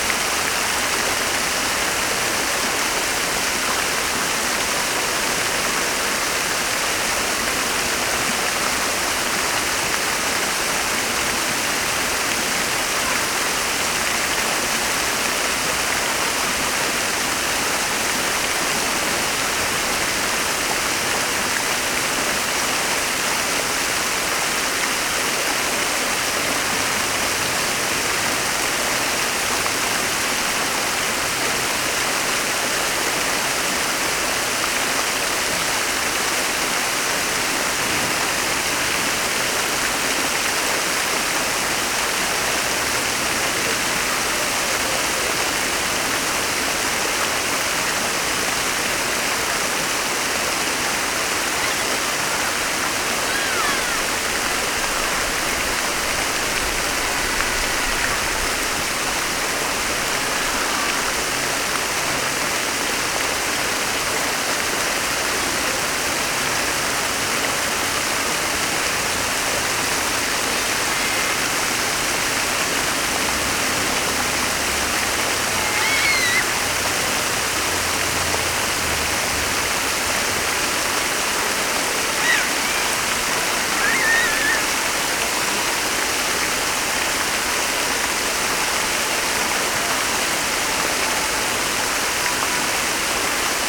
Fontaine place de la Rotonde de la Villette - Paris, 10eme (Jaurès)
Paris, France, 21 May, 16:10